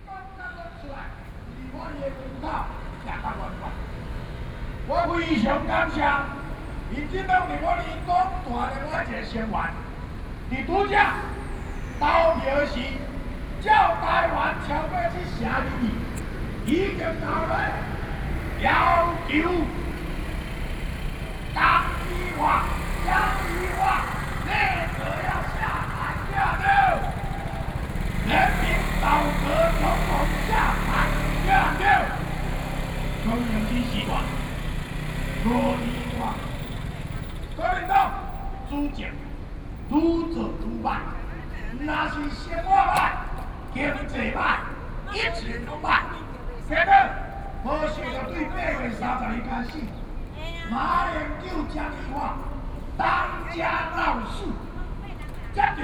100台灣台北市中正區幸福里 - Protest Speech
Opposite side of the road, Opposition leaders, Speech shows that the Government is chaos, Binaural recordings, Sony PCM D50 + Soundman OKM II
Taipei City, Taiwan, 15 October, 11:31